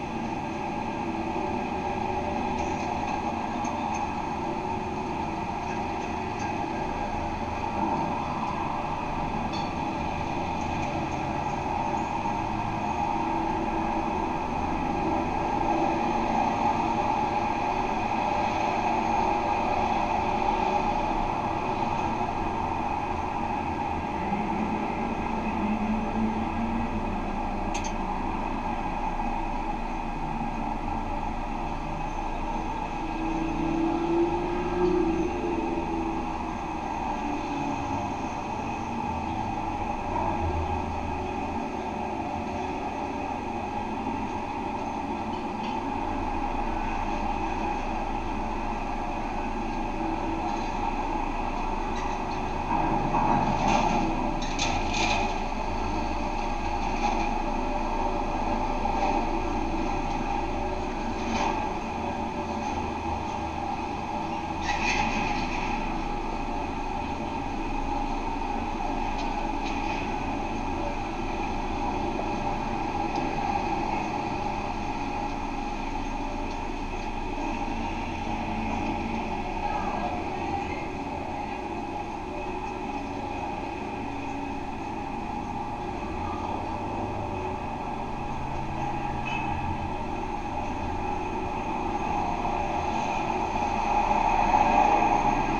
Maribor, Slovenia - one square meter: parking lot guardrail
a more recently built guardrail, separating the actively used parking lot from the abandoned riverside space and stairs. recorded with contact microphones. all recordings on this spot were made within a few square meters' radius.